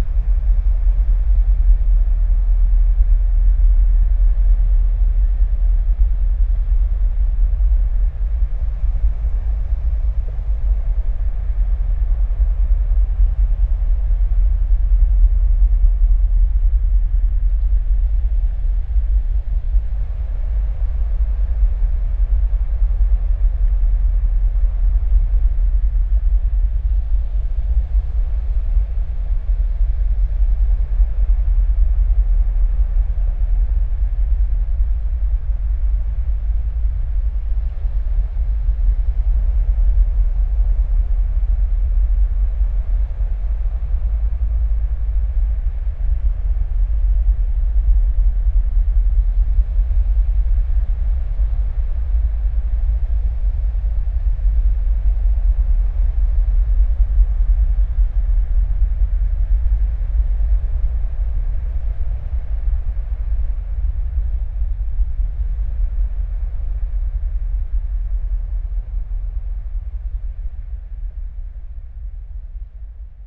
Cadzand, Nederlands - Container ships
Complicate sound. While I was sleeping here at night, I wished to record the deaf sound of the uninterrupted ballet of container ships. In aim to smother the sea sound and to maximize the sound of the boats, I put the recorder inside the sleeping bag and put volume level to high. Result is a strange sound, probably not exactly the truth, but notwithstanding representative.
Cadzand, Netherlands